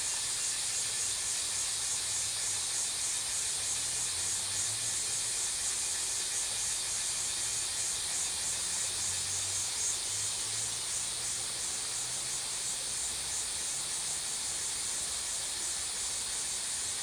紙寮坑, 桃米里Puli Township - Cicada sounds
Cicada sounds
Zoom H2n MS+XY